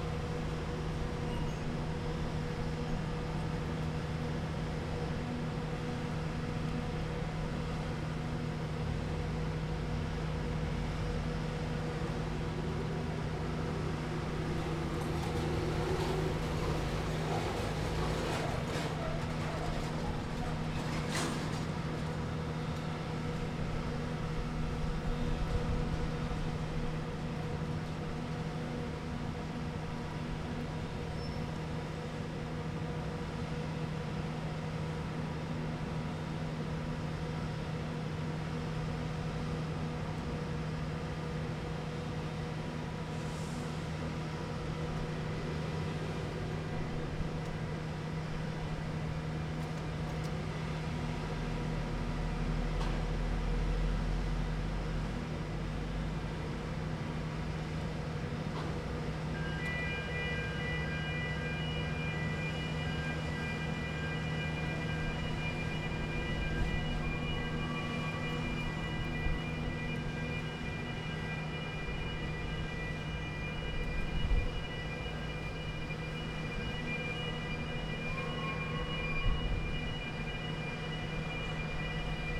Freeport, Birżebbuġa, Malta - cranes, machines at work
at the Freeport entrance, Birżebbuġa, Malta, cranes moving
(SD702 DPA4060)